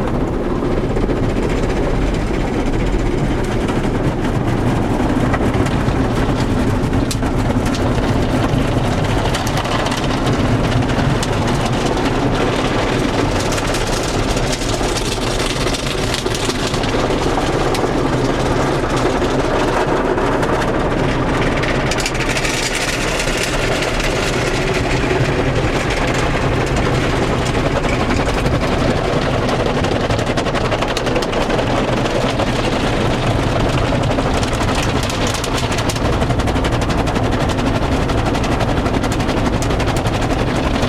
Hoofddorp, Nederland - Car Wash
The first half of a 6 minute carwash, that operates fully automatically.
9 August 2012, 10:54